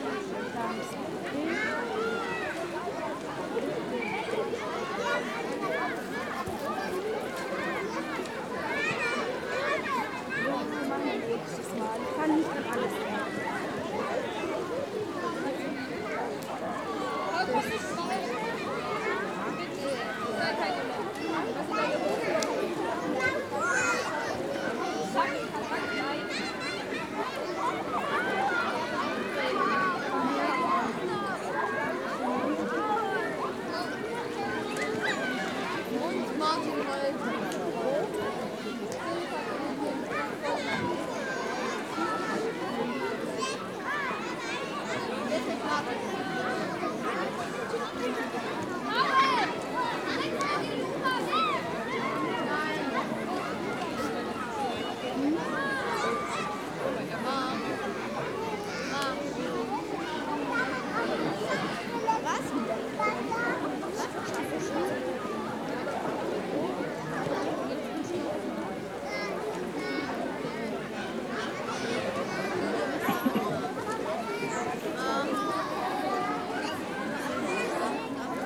Neu-Ulm, Deutschland - Summer Kids
A small Lake where kids and people are playing and chilling
Neu-Ulm, Germany, 13 August 2012